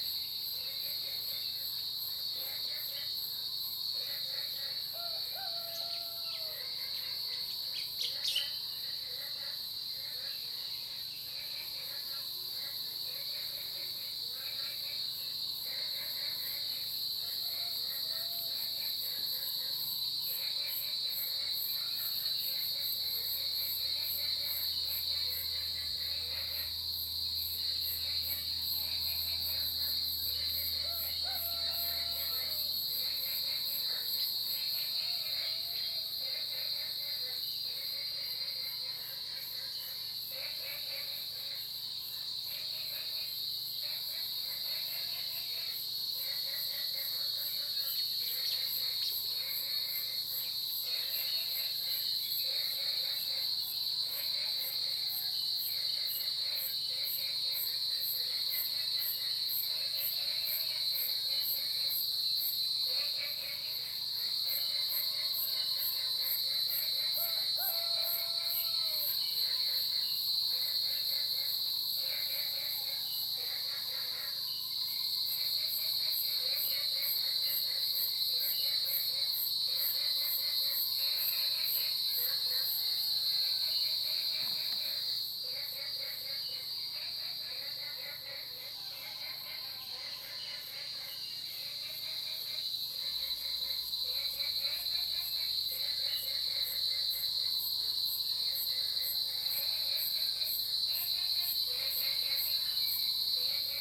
綠屋民宿, 桃米里Puli Township - Early morning
Early morning, Cicada sounds, Frogs chirping, Bird call
Zoom H2n MS+XY